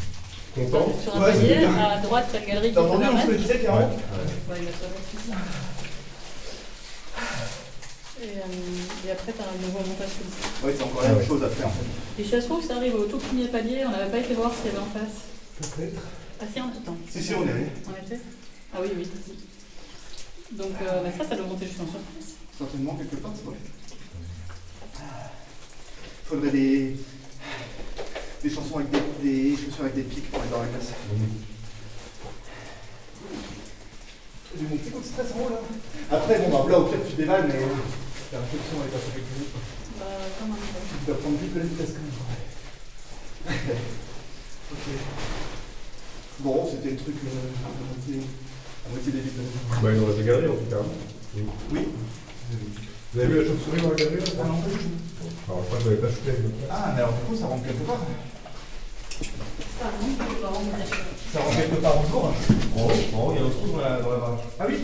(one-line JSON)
{"title": "Rimogne, France - Climbing a shaft", "date": "2018-02-11 10:55:00", "description": "Into the underground slate quarry, a friend is climbing a very inclined shaft. It's difficult to walk as everything is very sliding.", "latitude": "49.84", "longitude": "4.54", "altitude": "244", "timezone": "Europe/Paris"}